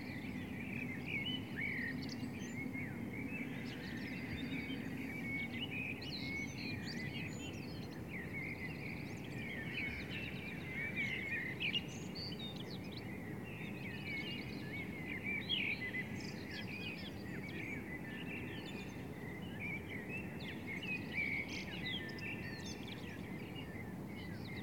Pflügerstraße, Berlin, Deutschland - Birds at Dawn
If you can't sleep, you can still record - and even at a time when you would normally sleep...
And it's beautiful to do that.
From top floor window to backyard.
On a Sony PCM100 with mics in pan mode